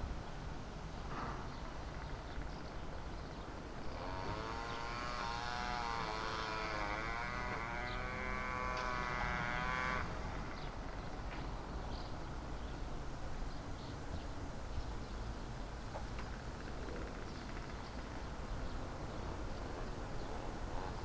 Poznan, balcony - crickets and lawn mower
a whole field behind the apartment building swarmed with crickets. a lawn mower to the right. morning city ambience.
Poznan, Poland